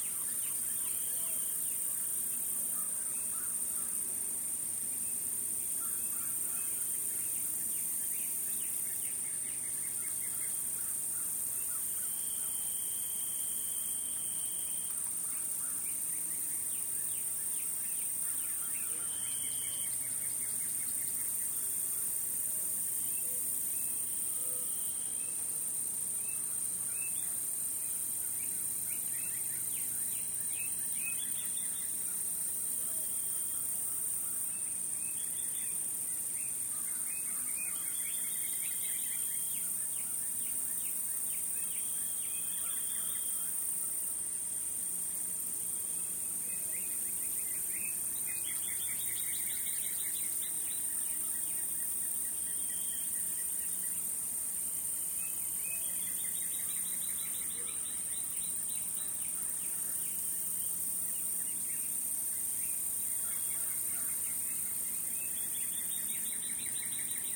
Fayette County, TX, USA - Sunday Dusk Arc: Ledbetter Ranch
Recorded at sundown from the balcony of a ranch home in Ledbetter, TX. Recorded with a Marantz PMD661 and a stereo pair of DPA 4060's.
23 June, 08:00